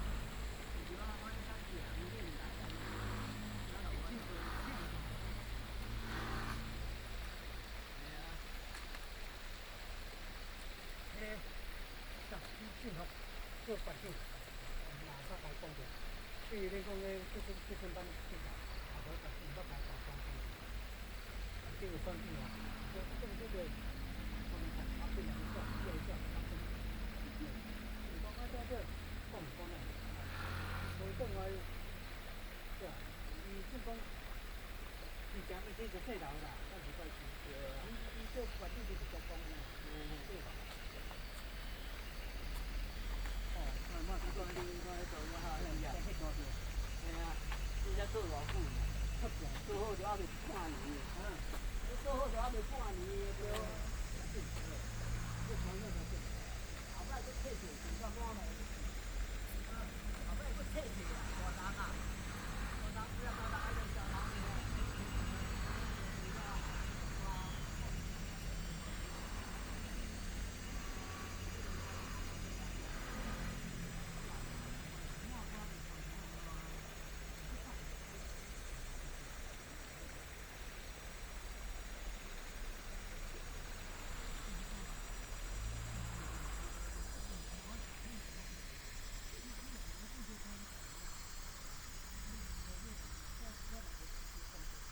湳仔溝溪, 復興路二段, Daxi Dist. - In the stream
In the stream, Tourists, Traffic sound